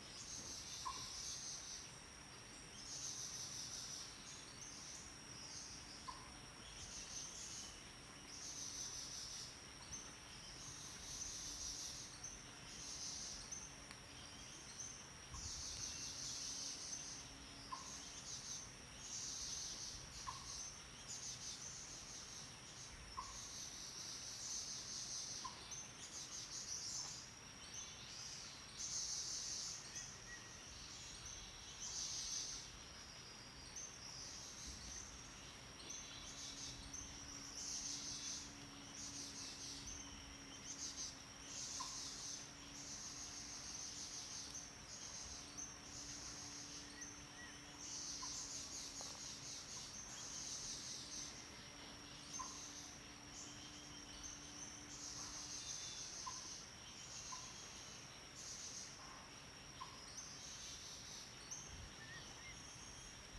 Shuishang Ln., Puli Township - In the bamboo forest edge
Birds singing, In the bamboo forest edge, Sound streams, Insects sounds
Zoom H2n MS+XY
Nantou County, Taiwan, 28 April 2016, ~09:00